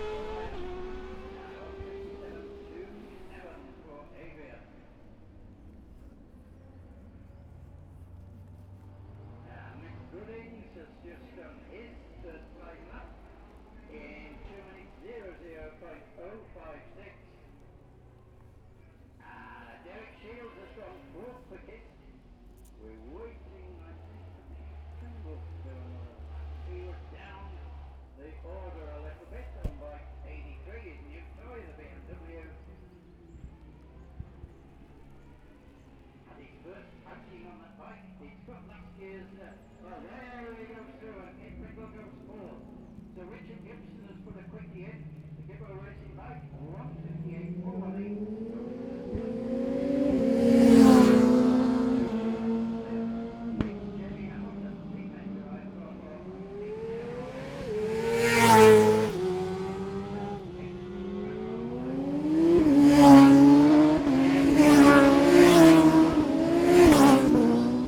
Scarborough, UK - motorcycle road racing 2012 ...
750cc+ qualifying plus some commentary ... Ian Watson Spring Cup ... Olivers Mount ... Scarborough ... open lavalier mics either side of a furry table tennis bat used as a baffle ... grey breezy day ...
15 April 2012